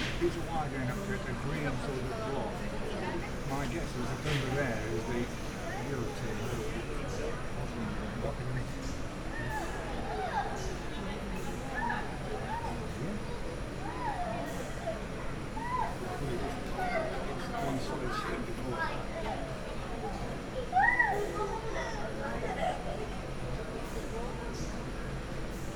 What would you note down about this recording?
Köln, Schokoladenmuseum / chocolate museum, chocolate production for tourists, people waiting for sweets, (Sony PCM D50, Primo EM172)